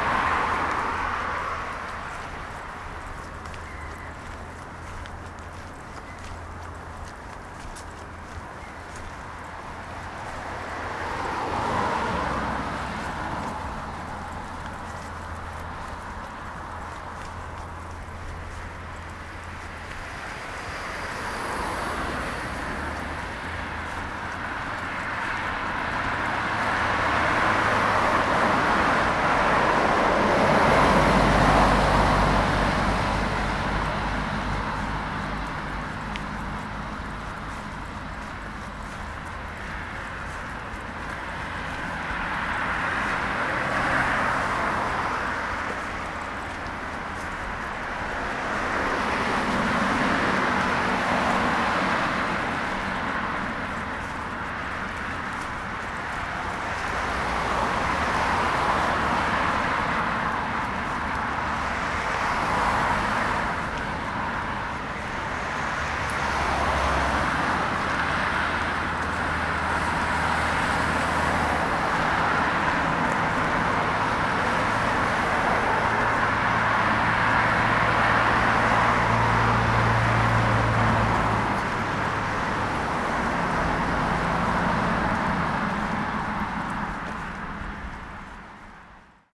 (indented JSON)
{
  "title": "Limerick City, Co. Limerick, Ireland - Walking along Condell Road",
  "date": "2014-07-18 14:35:00",
  "description": "heavy road traffic. walking on the raised footpath between the road and River Shannon. Interesting to note the difference in amplitude envelopes between vehicles approach from front or back. Faint seagulls on the left.",
  "latitude": "52.66",
  "longitude": "-8.65",
  "timezone": "Europe/Dublin"
}